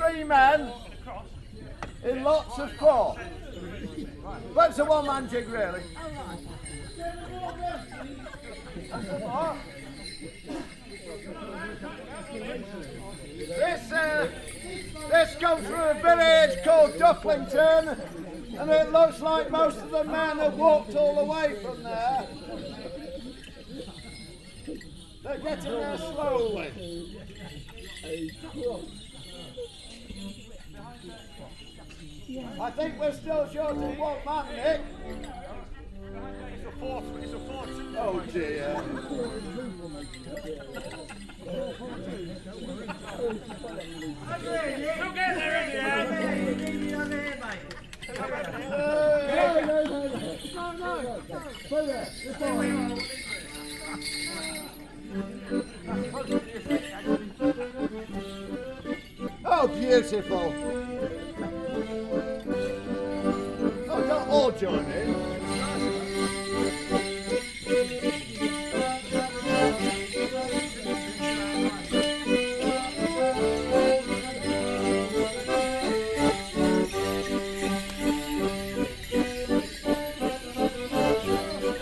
This is the sound of the Kennet Morris Men performing a one man jig at the Goring Heath Almshouses as part of their May Morning celebrations. This Morris side have been performing here for sixty years.